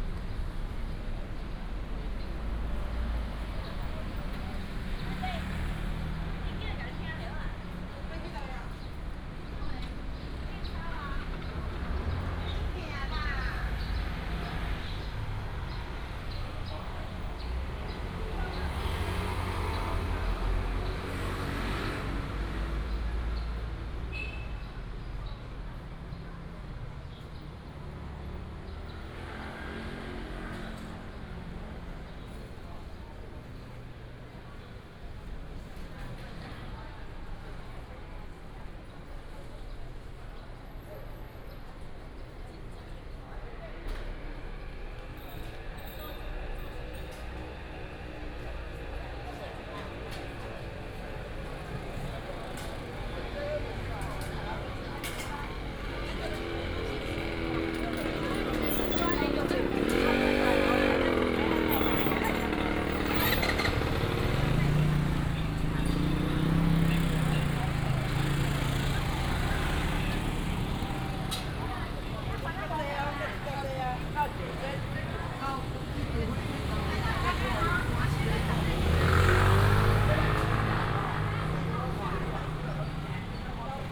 Walk through the market, The sound of the vendor, Traffic sound, sound of the birds